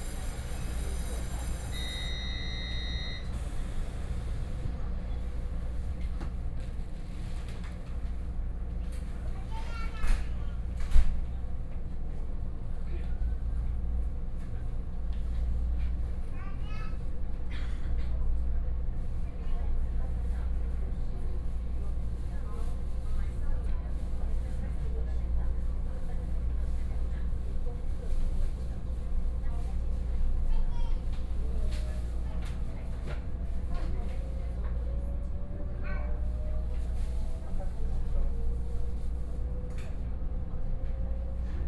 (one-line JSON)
{"title": "Sanyi Township, Taiwan - Local Train", "date": "2013-10-08 10:30:00", "description": "from Tongluo Station to Tai'an Station, Binaural recordings, Zoom H4n+ Soundman OKM II", "latitude": "24.39", "longitude": "120.77", "altitude": "374", "timezone": "Asia/Taipei"}